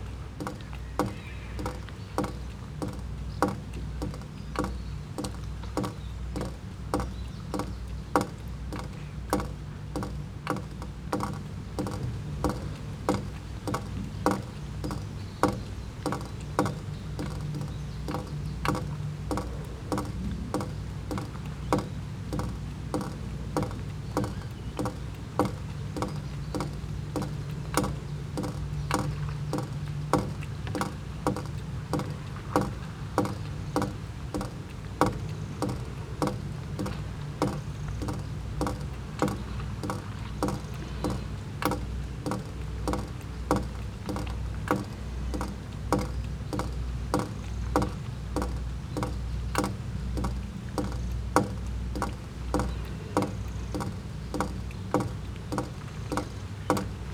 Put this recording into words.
At a wooden cottage in the small bay Kjekse. The sound of water dripping down the down spout of the house. international sound scapes - topographic field recordings and social ambiences